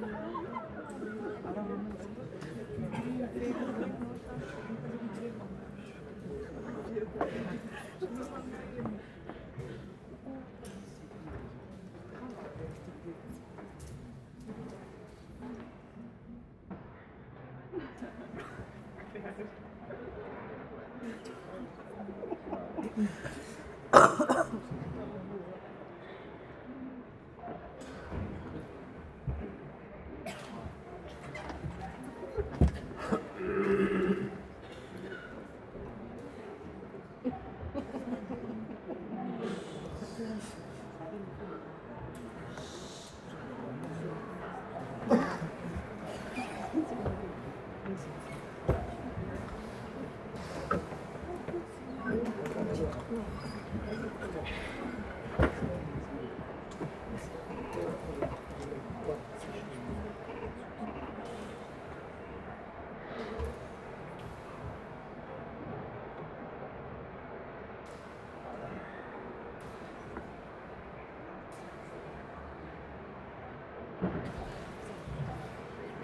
Inside the beautiful old theatre building. The sound of the audience first clapping then watching noisily the beginning of a dance show.
international city scapes - social ambiences and topographic field recordings
5 May 2012, 21:00